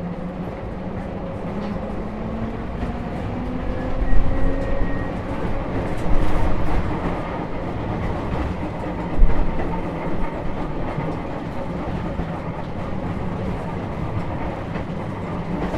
{"title": "Lutomiersk, Polska - Tramway N5, test drive of turist tram line 43", "date": "2013-01-22 23:08:00", "latitude": "51.75", "longitude": "19.21", "altitude": "159", "timezone": "Europe/Warsaw"}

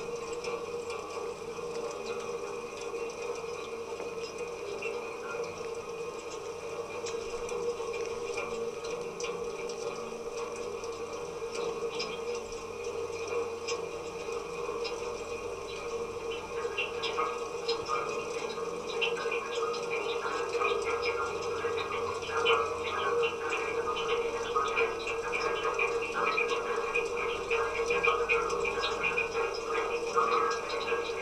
{"title": "Urban, Slovenia - rain on church lightning rod", "date": "2012-09-01 12:40:00", "description": "rain falls and gutters resonate through a lighting rod attached to a small church on the hill in urban. recorded with contact microphones.", "latitude": "46.60", "longitude": "15.61", "altitude": "582", "timezone": "Europe/Ljubljana"}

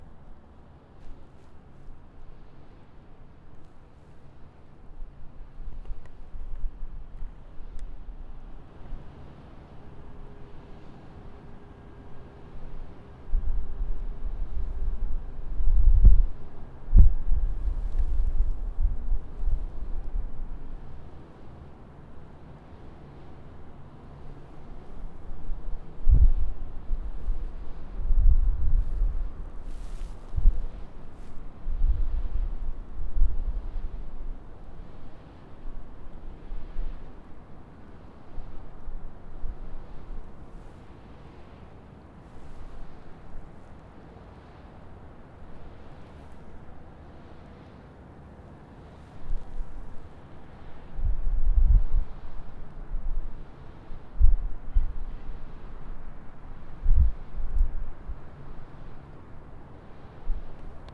{"title": "Back-Upstraat, Amsterdam, Nederland - Wasted Sound Windmale", "date": "2019-10-30 18:20:00", "description": "The wasted sound of the back part of the NDSM.", "latitude": "52.41", "longitude": "4.87", "timezone": "Europe/Amsterdam"}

